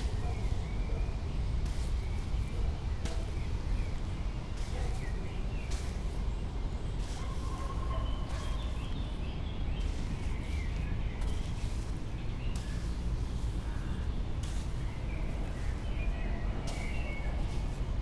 slowly walking the dunes, train passes behind the river
Na Otok, Kamnica, Slovenia, 18 May 2015, 19:31